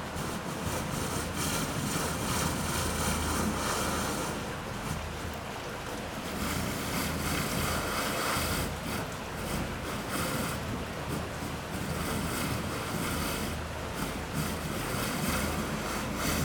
Ottange, France - Pulsed water
In an undeground iron mine, we found a pipe routing water with very high pressure. There was a hole in the pipe, because everything is rusted. Cyclically, high pressure causes geysers. This makes a quite anxiety ambience.